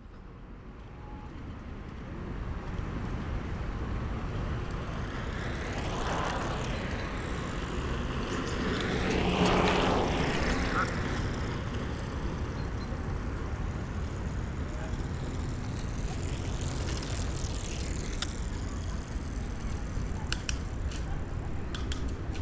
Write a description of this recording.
Au bord de la piste cyclable à Duingt près du lac d'Annecy, beaucoup de cyclistes de toute sorte, bruits ambiants de ce lieu très touristique.